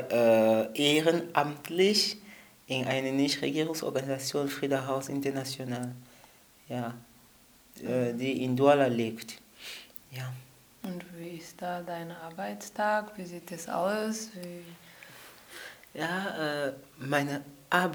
FUgE, Hamm, Germany - Marie-Claire interviews Bristol...
Marie Claire NIYOYITA, from “Zugvögel” Rwanda, interviews Bristol TEDJIODA, from Friedahouse International Cameroon during a workshop with radio continental drift. Both of them belong to the first group of young volunteers from the Global South hosted in Germany as guests of local NGOs. The “Reverse” Programme was initiated by Engagement Global together with a network of local sister-organisations, an effort to bring the “North-South-Dialogue” to local people and their organisations on the ground.
The complete playlists is archived here: